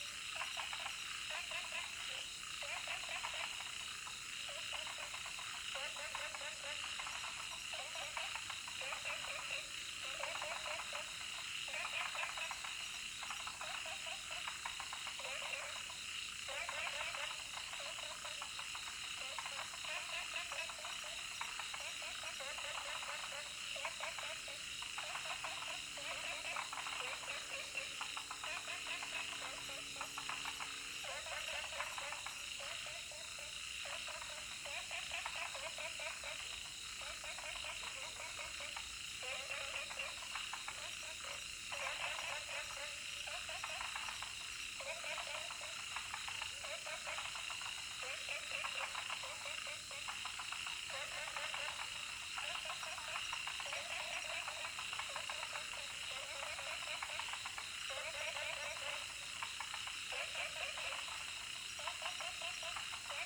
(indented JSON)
{
  "title": "顏氏牧場, 埔里鎮桃米里, Taiwan - Frogs and Insects sounds",
  "date": "2016-06-07 19:15:00",
  "description": "Frogs chirping, Insects called\nZoom H2n MS+XY",
  "latitude": "23.93",
  "longitude": "120.91",
  "altitude": "701",
  "timezone": "Asia/Taipei"
}